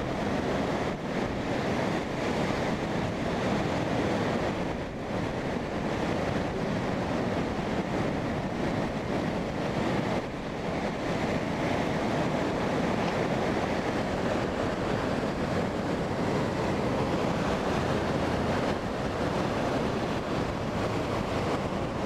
Pharo, Marseille, France - found object/heolian harp/lo-fi
a metal pipe
two horizontals holes
a lot of wind
5 January, ~18:00